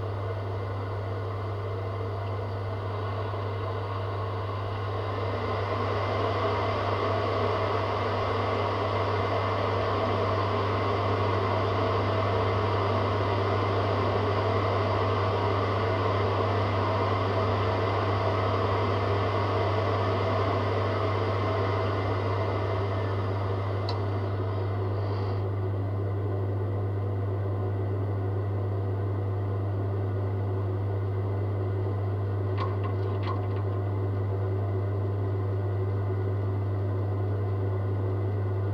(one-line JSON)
{"title": "Unnamed Road, Malton, UK - the boiler ...", "date": "2019-02-05 09:00:00", "description": "the boiler ... pair of jr french contact mics either side of casing ... there are times of silence ... then it fires up at 08:45 and 14:00 ...", "latitude": "54.12", "longitude": "-0.54", "altitude": "76", "timezone": "Europe/London"}